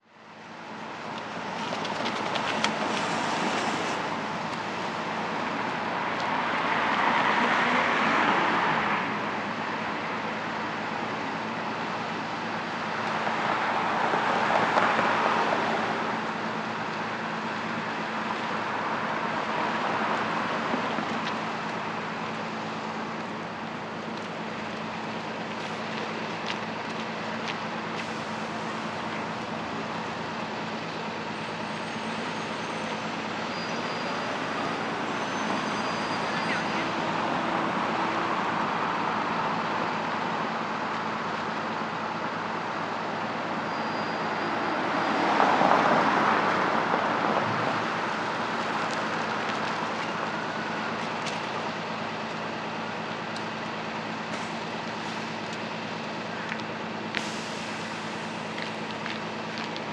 {"title": "Rue Sainte-Catherine O, Montréal, QC, Canada - Crescent Street", "date": "2020-12-17 08:57:00", "description": "Recording at the corner of Crescent St and Saint-Catherine St. At this hour there would be a larger number of commuters heading in different directions to get to work or head to school. Yet, we hear little amounts of vehicles and pedestrians on their morning journey due to many of the workplace establishments being shut down during lockdown. We hear only a few instances of what is left of morning routines for Downtown Montreal.", "latitude": "45.50", "longitude": "-73.58", "altitude": "47", "timezone": "America/Toronto"}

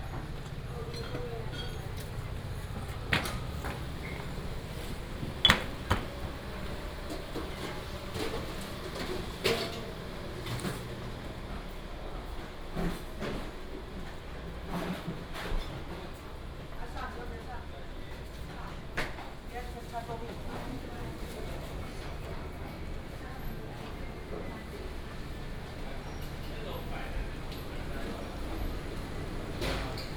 {"title": "Nanchang W. St., Taoyuan Dist. - Ready to operate the traditional market", "date": "2017-06-27 07:06:00", "description": "Ready to operate the traditional market, Walking in the market", "latitude": "24.99", "longitude": "121.31", "altitude": "104", "timezone": "Asia/Taipei"}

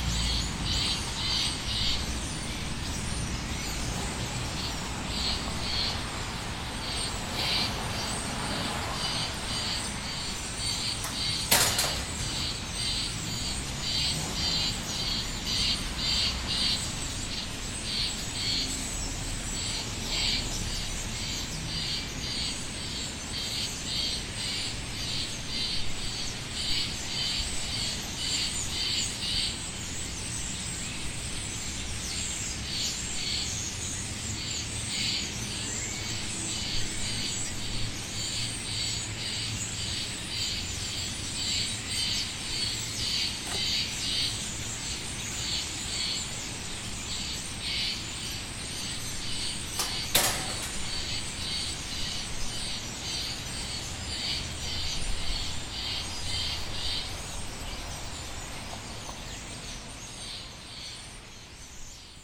{"title": "Mount Vernon, Baltimore, MD, USA - Birds at Peabody", "date": "2016-10-12 18:35:00", "description": "Recording of birds conversing in the evening outside of George Peabody library, Captured with a Zoom H4n Pro.", "latitude": "39.30", "longitude": "-76.61", "altitude": "35", "timezone": "America/New_York"}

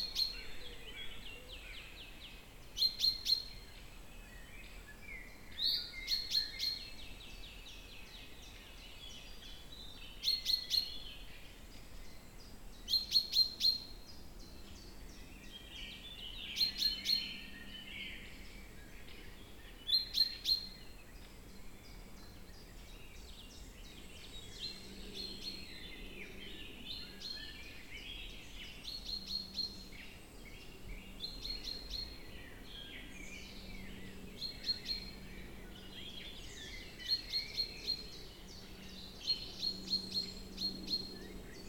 {"title": "Via Rhôna Serrières-en-Chautagne, France - Calme Via Rhôna", "date": "2022-04-08 17:00:00", "description": "Chants d'oiseaux dans la peupleraie de Chautagne, arrêt sur la Via Rhôna pour profiter du calme des lieux.", "latitude": "45.87", "longitude": "5.82", "altitude": "243", "timezone": "Europe/Paris"}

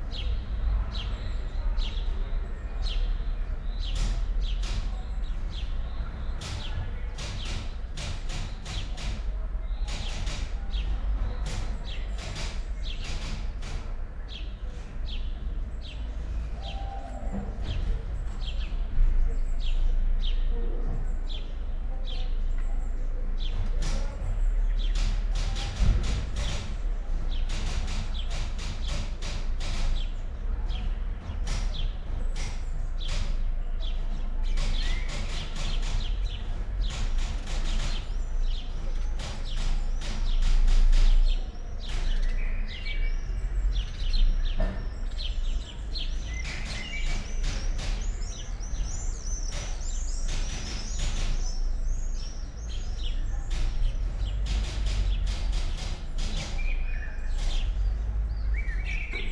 {"title": "Mladinska, Maribor, Slovenia - evening typing", "date": "2013-06-04 19:43:00", "description": "rewriting 18 textual fragments, written at Karl Liebknecht Straße 11, Berlin, part of ”Sitting by the window, on a white chair. Karl Liebknecht Straße 11, Berlin”\nwindow, typewriter, evening yard ambiance", "latitude": "46.56", "longitude": "15.65", "altitude": "285", "timezone": "Europe/Ljubljana"}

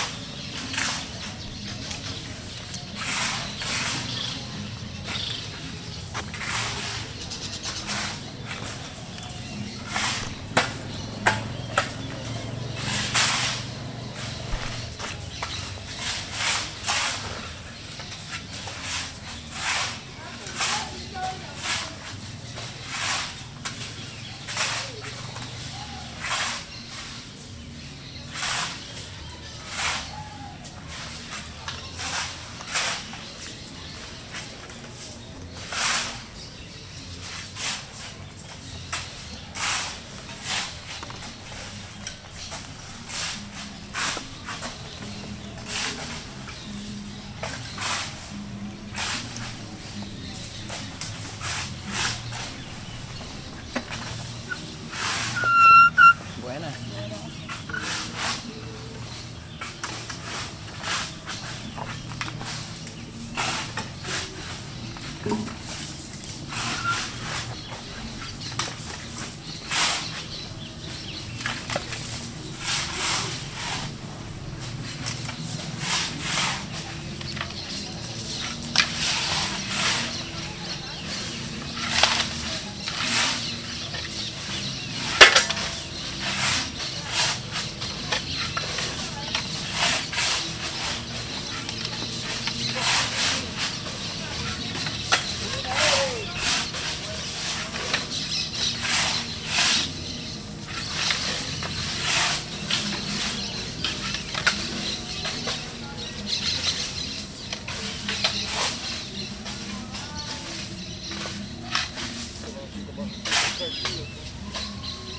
{"title": "Orilla del Magdalena, Mompós, Bolívar, Colombia - Areneros", "date": "2022-05-02 15:29:00", "description": "Un grupo de tres hombres sin camisa cargan a pala una volqueta con arena y piedra de río que fue extraída del Magdalena. Una de las barca que sirven para recoger la arena, espera en la orilla.", "latitude": "9.24", "longitude": "-74.42", "altitude": "12", "timezone": "America/Bogota"}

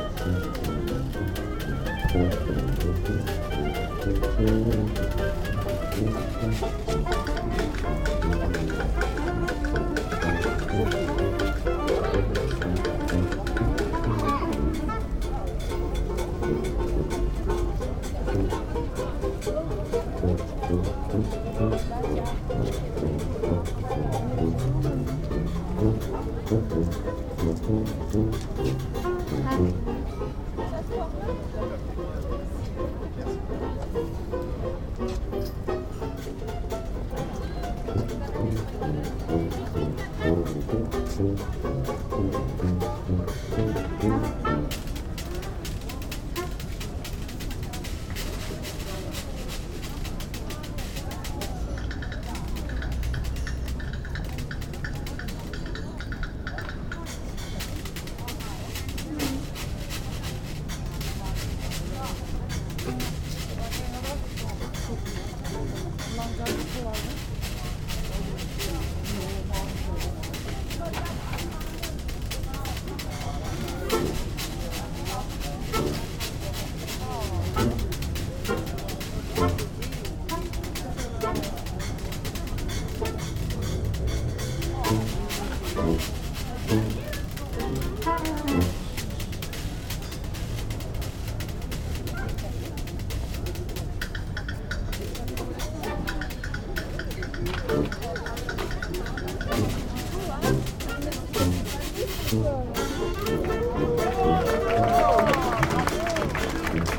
{"title": "Juan-les-Pins, Antibes, France - Old time band", "date": "2013-07-12 19:22:00", "description": "On the boulevard where we were drinking Mojitos in the warm evening sunshine, a band of musicians turned up consisting of jazz saxophonist; clarinetist; tuba-player; banjo player and washboard aficionado. What a wonderful sound! I wandered over to check out their music, and you can hear the outdoor setting; many folks gathered around to hear the joyful music, a little bit of traffic, and the chatter of an informal gathering. After listening to this I went home and started searching on ebay for old washboards and thimbles...", "latitude": "43.57", "longitude": "7.11", "altitude": "9", "timezone": "Europe/Paris"}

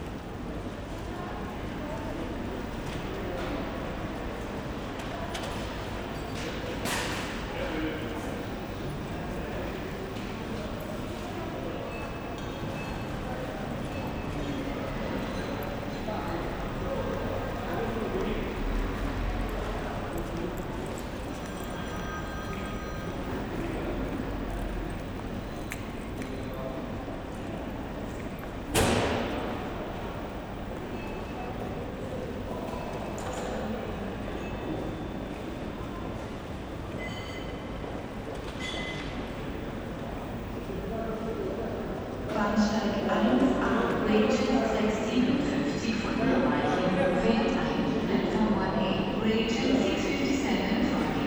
{"title": "Graz, Hauptbahnhof - hall ambience", "date": "2012-05-26 17:30:00", "description": "ideling at Graz main station, had to wait 4h for my train to Slovenia. ambience at the station hall.\n(tech: SD702, Audio Technica BP4025)", "latitude": "47.07", "longitude": "15.42", "altitude": "366", "timezone": "Europe/Vienna"}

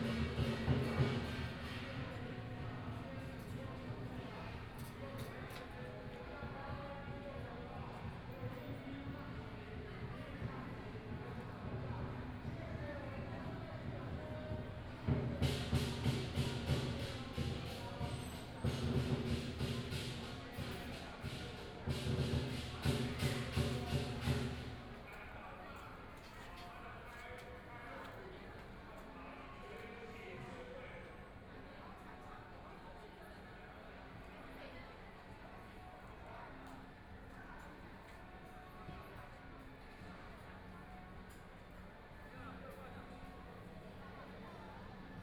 {"title": "Zhongzheng District, Taipei - temple festivals", "date": "2013-11-16 10:27:00", "description": "Traditional temple festivals, Through a variety of traditional performing teams, Binaural recordings, Zoom H6+ Soundman OKM II", "latitude": "25.05", "longitude": "121.51", "altitude": "23", "timezone": "Asia/Taipei"}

